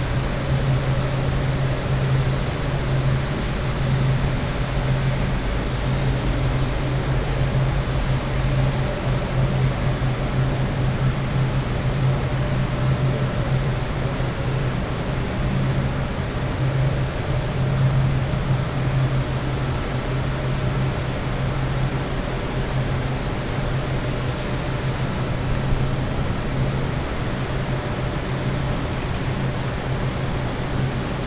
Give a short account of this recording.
noise of servers and aircons in data center. international exchange point for internet traffic.